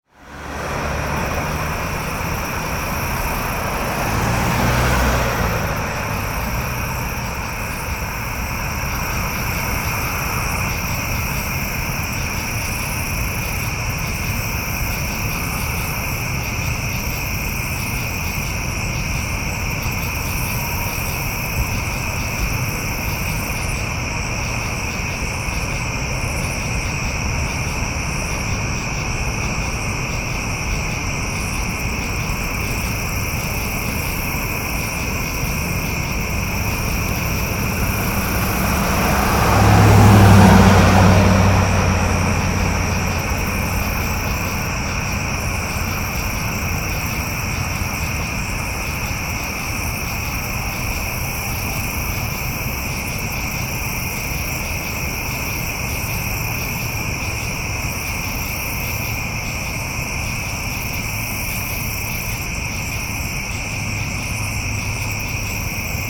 Insect chorus near Allstate HQ
Insects swarm the forests in the suburbs of Chicago.
IL, USA